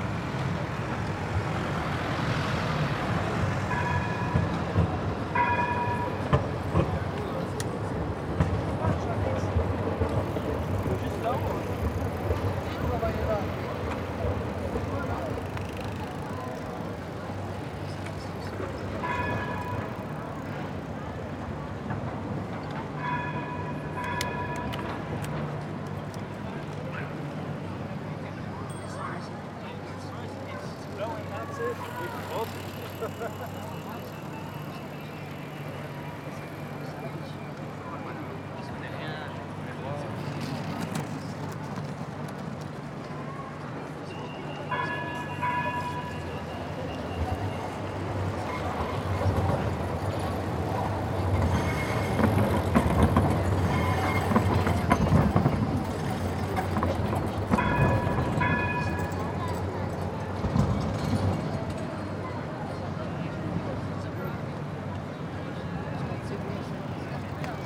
Trams, bikers, skaters.
Tech Note : Sony PCM-D100 internal microphones, wide position.
19 September, ~14:00